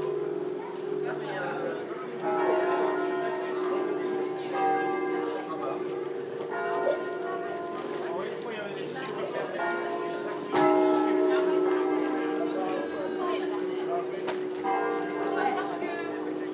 {"title": "Marché, Bourgueil, France - Brocante bells", "date": "2014-08-03 11:33:00", "description": "During the brocante (second hand/antiques) market in Bourgueil the church started tolling this tune. At first I stood outside the church and then walked under the covered market place, where you can hear brief sounds of people's conversations.\nRecorded with ZOOM-H1, edited with Audacity's high-pass filter to reduce wind noise.", "latitude": "47.28", "longitude": "0.17", "altitude": "47", "timezone": "Europe/Paris"}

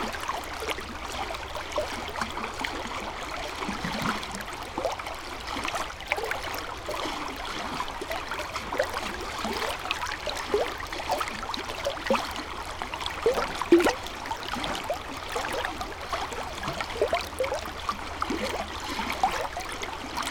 {"title": "river Drava, Loka, Slovenia - soft white bubbles v 2", "date": "2015-12-13 14:20:00", "latitude": "46.48", "longitude": "15.76", "altitude": "233", "timezone": "Europe/Ljubljana"}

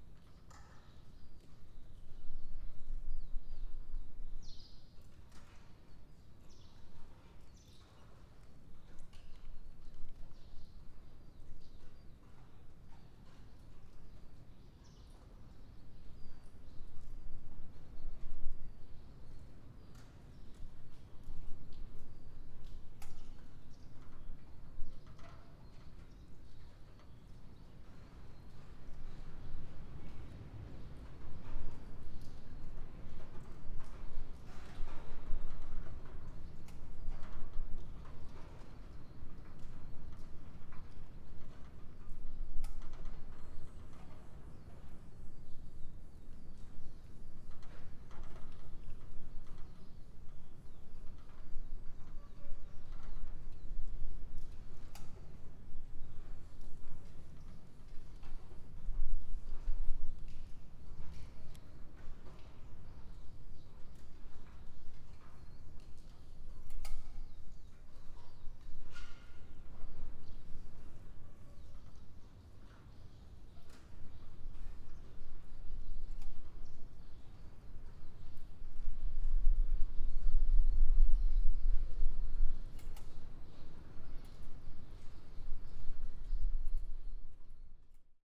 {"title": "Buzludzha, Bulgaria, inside hall - Buzludzha, Bulgaria, large hall 3", "date": "2019-07-15 12:26:00", "description": "The longer I heard it the more it sounded like music, a quiet requiem for the communist hope while the wind is roraring", "latitude": "42.74", "longitude": "25.39", "altitude": "1425", "timezone": "Europe/Sofia"}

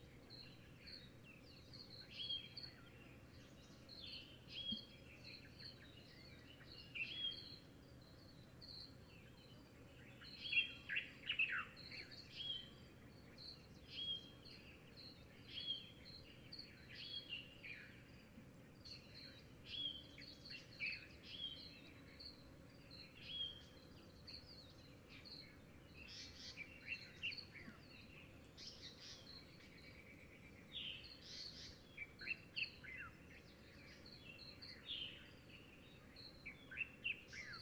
{"title": "Ayn Hamran, Dhofar, Oman - birds at sunrise", "date": "2014-01-14 06:35:00", "description": "small part of the dawn chorus in Ayn Hamran.", "latitude": "17.10", "longitude": "54.29", "altitude": "164", "timezone": "Asia/Muscat"}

